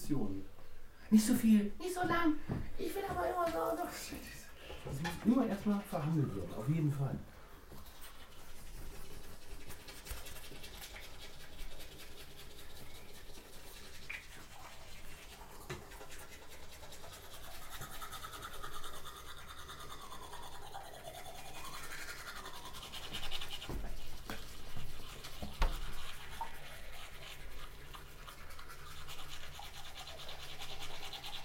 haus waldfrieden, alf - tooth brushes, guest room, haus waldfrieden, alf
recorded may 31, 2008 - project: "hasenbrot - a private sound diary"